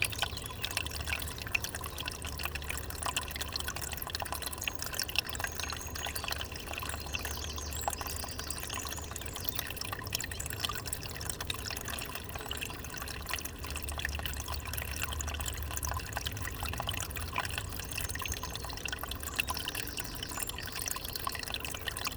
Genappe, Belgique - Spring
A small spring flowing from the ground near the Ry d'Hez river.
Genappe, Belgium, April 2017